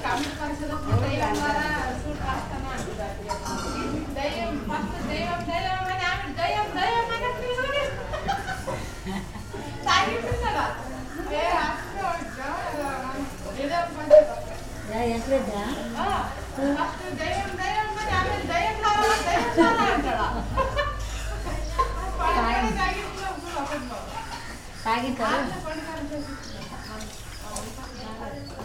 janata plot, Hampi, street life
India, Karnataka, Hampi
Karnataka, India